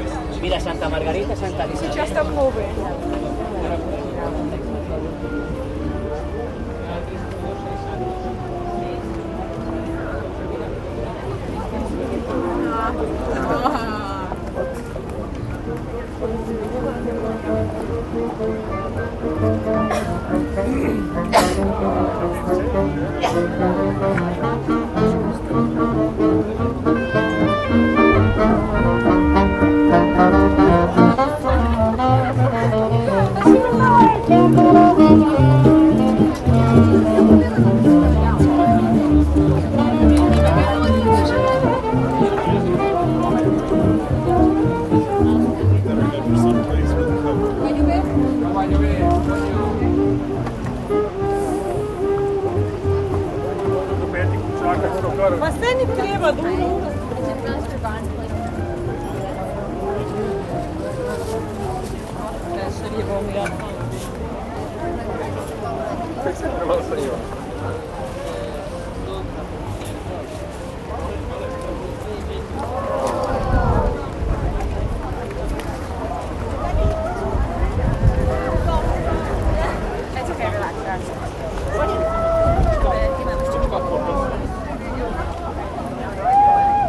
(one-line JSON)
{"title": "Charles Bridge", "description": "recording of soundwalk across the bridge by Peter Cusack.part of the Prague sounds project", "latitude": "50.09", "longitude": "14.41", "altitude": "177", "timezone": "Europe/Berlin"}